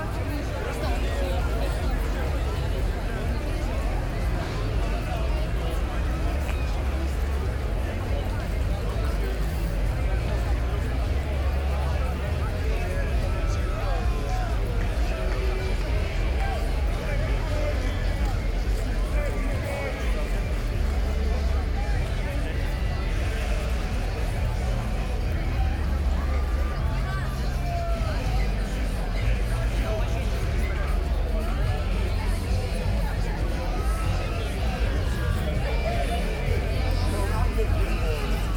Brno Reservoir, Czech Republic | Brněnská přehrada, Brno-Kníničky, Česko - ”Ignis Brunensis“ Fireworks Competition
Festive event at Brno reservoir. Fireworks (shortened, provided by the Theatrum Pyroboli) and walk (with people) through an amusement park (by the reservoir).
Binaural recording, listen through decent headphones.
Soundman OKM Studio II microphones, Soundman A3 preamp. HRTF corrected, dynamic is lowered using multiband tool.
Jihomoravský kraj, Jihovýchod, Česko, 2 July